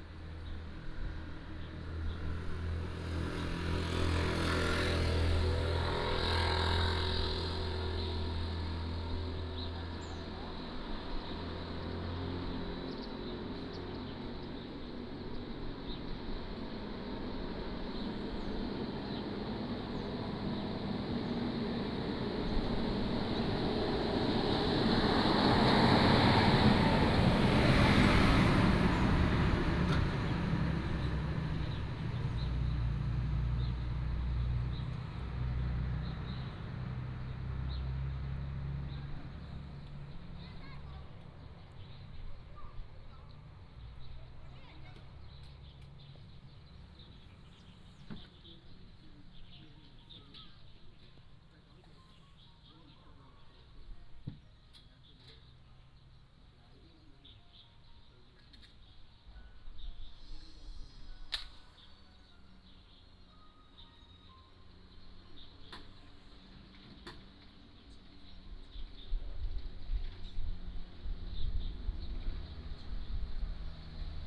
怡園, 塘岐村 - Next to the park
Next to the park, Traffic Sound, Construction, Birds singing
福建省, Mainland - Taiwan Border, 15 October 2014, 14:44